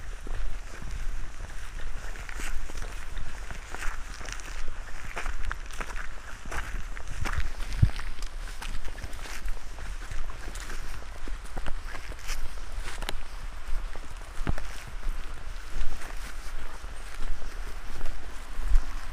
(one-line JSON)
{"title": "Trehörningsjö, vandring - Walking perspective", "date": "2010-07-18 19:22:00", "description": "Walking perspective on the small path following Husån rapids in a dense vegetation. Recording made on World Listening Day, 18th july 2010.", "latitude": "63.69", "longitude": "18.85", "altitude": "174", "timezone": "Europe/Berlin"}